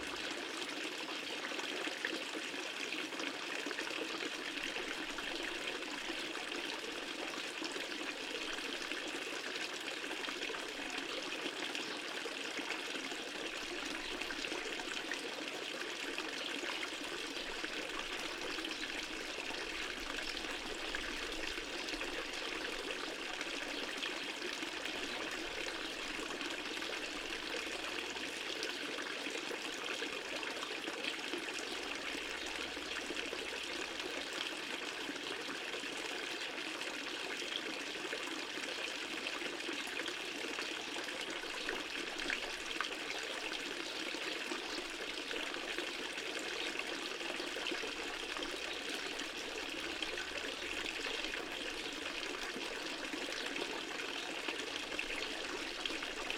Largo do Dr. José Frederico Laranjo, Castelo de Vide, Portugal - Public Fountain
Stream from ta public fountain, water sounds, birds. Recorded with a AT4025 into a SD mixpre6
14 June 2019